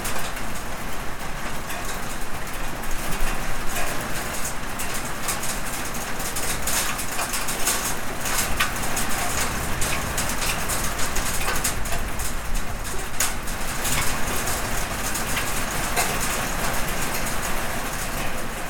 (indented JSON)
{
  "title": "Bonang VIC, Australia - HailStormTinRoof",
  "date": "2017-09-16 15:30:00",
  "description": "Sudden storm in early spring, hail stones striking an iron roof with metal flues for melody",
  "latitude": "-37.14",
  "longitude": "148.72",
  "altitude": "652",
  "timezone": "Australia/Melbourne"
}